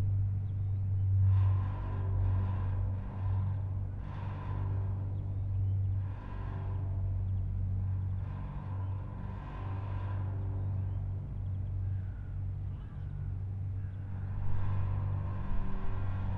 Kienbergpark, Berlin, Deutschland - ropeway post drone
For the IGA (international garden exhibition) 2017, a ropeway was built across the Wuhle river valley, stretching over a few hundred meters . While the ropeway is running, it creates vibrations and resonances in the pole beneath.
(SD702, SL502 ORTF)